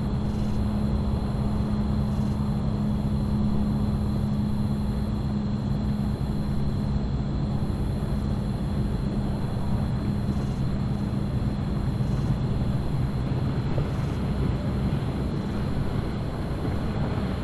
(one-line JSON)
{"title": "mt. bonnell, Austin, tx, night time", "date": "2010-07-18 21:31:00", "description": "Top of Mt. Bonnell, Austin, Tx. Overlooking river. Field, Nature.", "latitude": "30.32", "longitude": "-97.77", "altitude": "205", "timezone": "America/Chicago"}